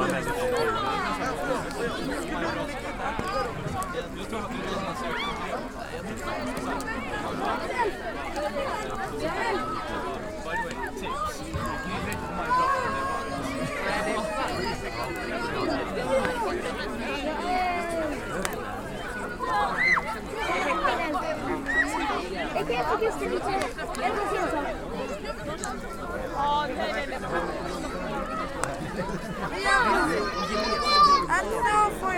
Fisksätra, Svartkärrsstigen - Nuit de Walpurgis
Nuit de Walpurgis, tous autour du feu.